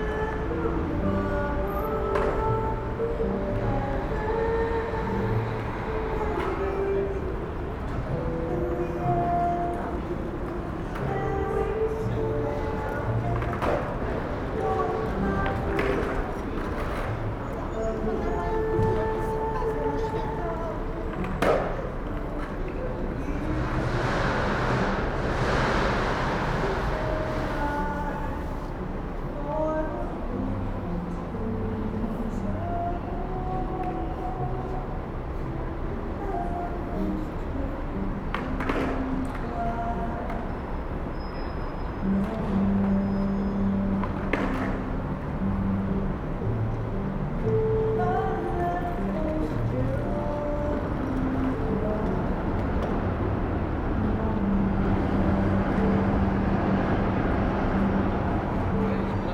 Poznan, Zwierzyniecka - court yard behind Baltyk building
relatively quiet space among new Baltik building, a hotel and a Concordia Design building. There are a few restaurants there, coffee place, a few benches to sit down. Skaters toss their skateboards, music from restaurants, a girl swinging by at her scooter, plastic ziplock bag crackling in the wind near the recorder, traffic noise from a circle crossing nearby. (roland r-07)
Poznań, Poland, 14 May 2019